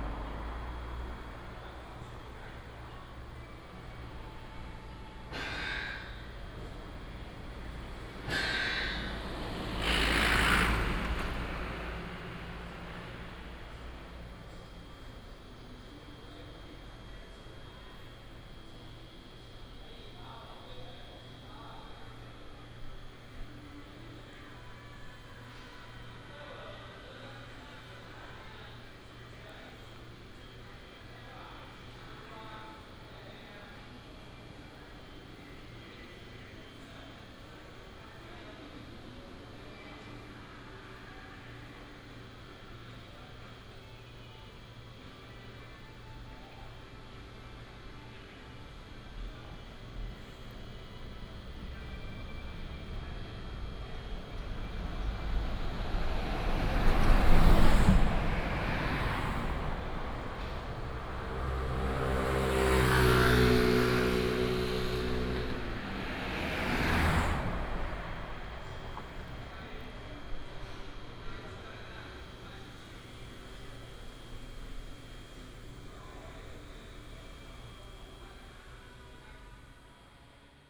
Fengshi Rd., Shigang Dist., Taichung City - Next to the factory
Out of the factory, Factory sound, Traffic sound, Bird call, Binaural recordings, Sony PCM D100+ Soundman OKM II
Taichung City, Taiwan, 1 November 2017